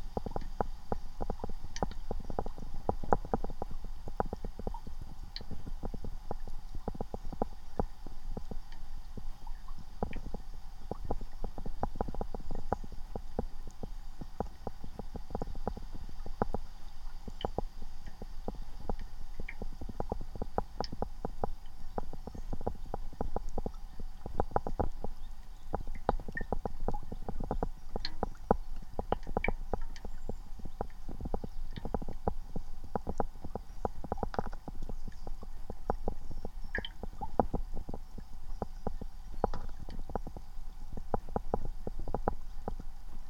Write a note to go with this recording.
Though it sounds like two distinct mono recordings - which in one sense it is - both channels were recorded at the same time on the same device (Zoom H4n). Sounds were picked up using piezo-element contact microphones on the tops of beverage cans placed into the water. The left channel has a continuous sound from what seems to be a pebble on the creek-bed being rolled about by the current, whereas the right has only occasional sounds of water movement caused by the can. Both channels also pick up ambient sounds from the air such as red-winged blackbirds, dogs, and people.